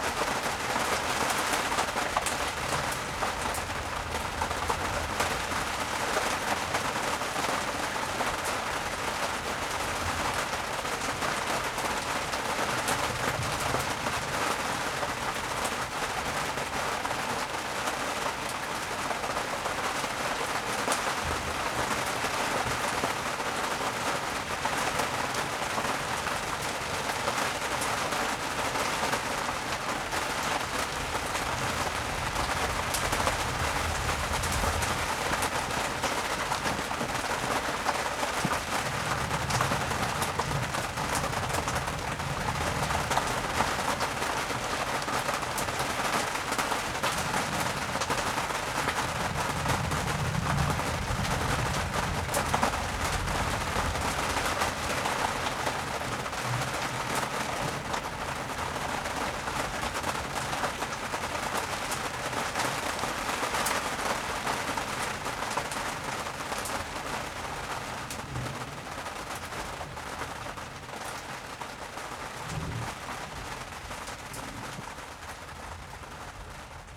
thunderstorm, heavy rain hits the tarp
the city, the country & me: june 28, 2011
Workum, The Netherlands, 28 June 2011, 21:54